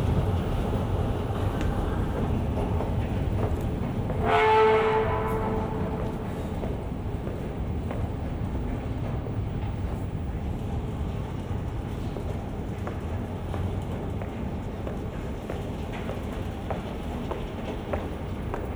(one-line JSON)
{"title": "The Loop, Chicago, IL, USA - washington subway", "date": "2012-02-28 13:00:00", "description": "this is a daily walk to the blue line recorded on a binaural mic. that being said it's best listened to with headphones.", "latitude": "41.88", "longitude": "-87.63", "altitude": "185", "timezone": "America/Chicago"}